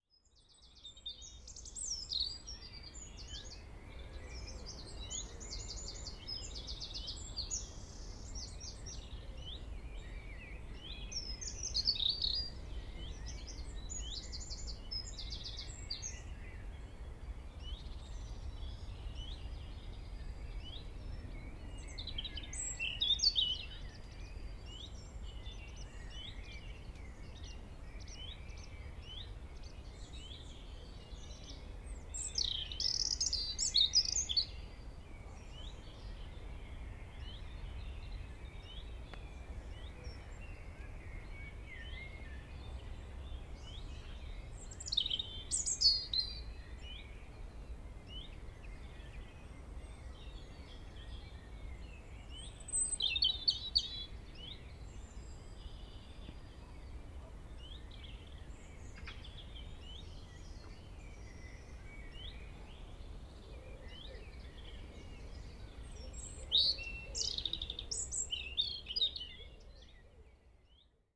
Vallée des Traouiero, Trégastel, France - Robin Redbreast on the top of the valley [Valley Traouïero]
Début de soirée. Le chant d'un Rouge-gorge en haut de la vallée.
Early evening. The song of a robin at the top of the valley.
April 2019.
France métropolitaine, France